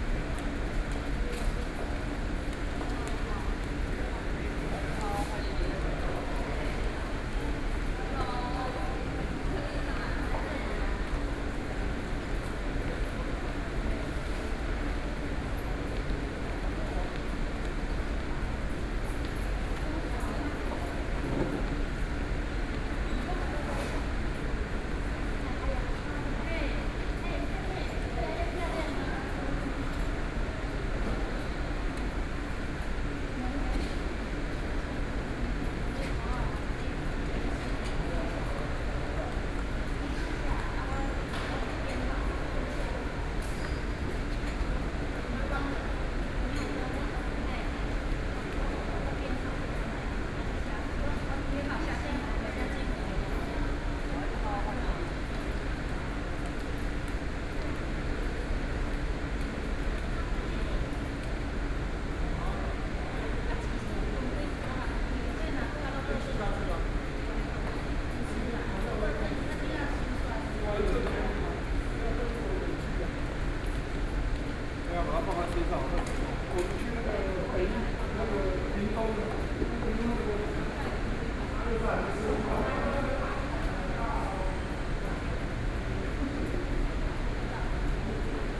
New Taipei City Government, Taiwan - Wedding restaurant entrance
Wedding restaurant entrance, Binaural recordings, Sony Pcm d50+ Soundman OKM II
12 October 2013, 11:49